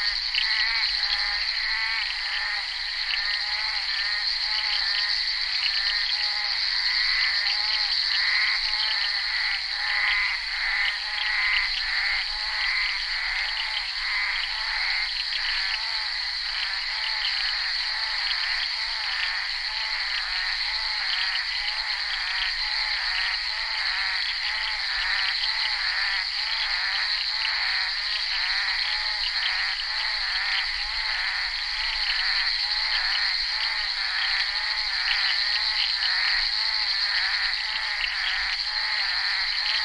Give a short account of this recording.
enregistré sur le tournage Bal poussière dhenri duparc en février 1988